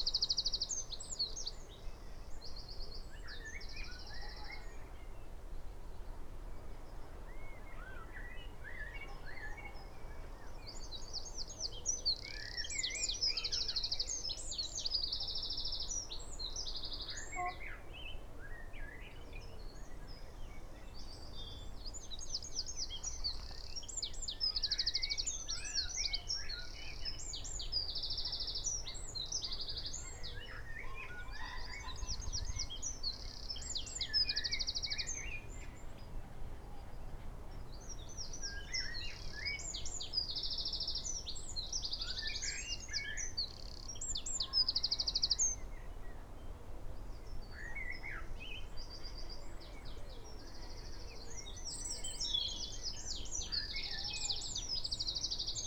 wren soundscape ... loud proud ... occasionally faint ... SASS to Zoom H5 ... bird calls ... song ... pheasant ... blackbird ... robin ... buzzard ... red-legged partridge ... tawny owl ... carrion crow ... great tit ... willow warbler ... blackcap ... chaffinch ... coal tit ... dunnock ... blue tit ... SASS wedged in crook of tree ... wren song and calls almost every minute of the soundscape both near and far ...
Yorkshire and the Humber, England, United Kingdom, 20 April